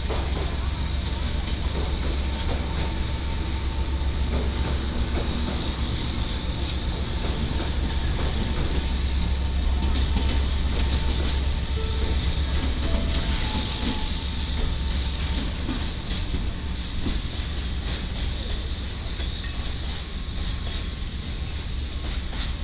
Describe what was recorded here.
walking in the Station, From the station hall, To the station platform, Footsteps